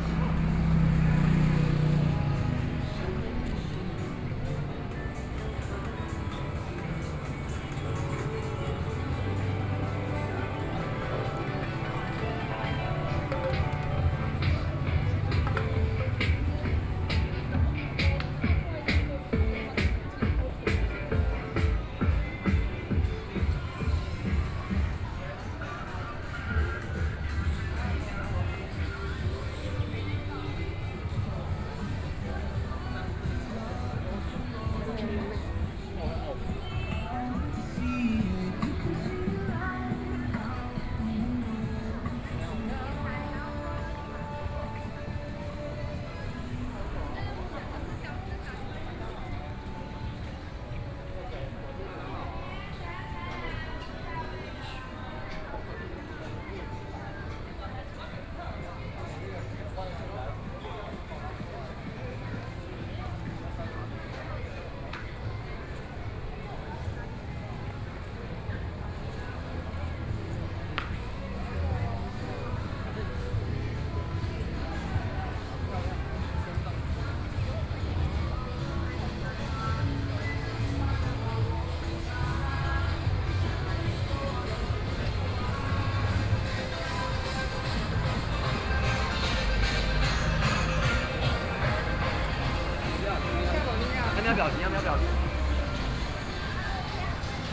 新崛江商圈, Kaohsiung City - Shopping district
Walking through the shopping district